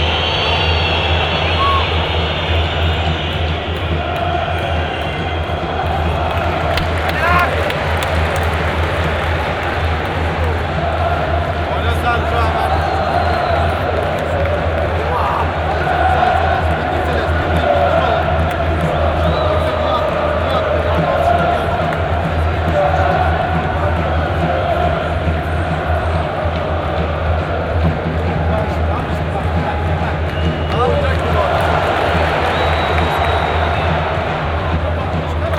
Şişli/Istanbul Province, Turkey - Galatasaray Türk Telekom Arena
Seyrantepe a great Saturday evening I came to the Turk Telekom Arena Stadium. Galatasaray – Gençlerbirliği match I started to save during the ambiance on the inside. This is really a very noisy place. To lower than -20 decibels during registration had preamfi. It was extremely large and splendid interior acoustics.
P. S. Galatasaray defeated the first half while the second half 0-2. 3-2 in the state to have brought. Listen to recordings that were recorded in the moments where the score to 2-2.